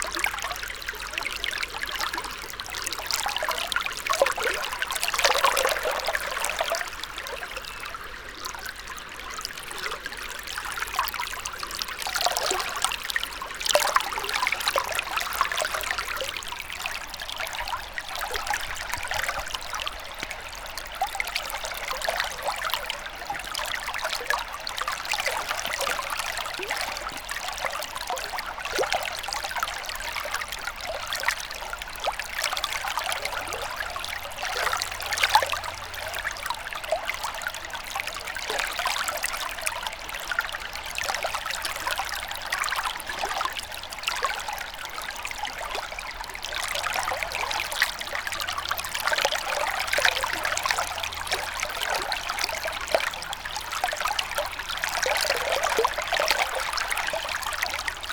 river Drava, Loka - stone and river
new (from winter 2015) artificial water canal in the old river bed, rapids flow, river waves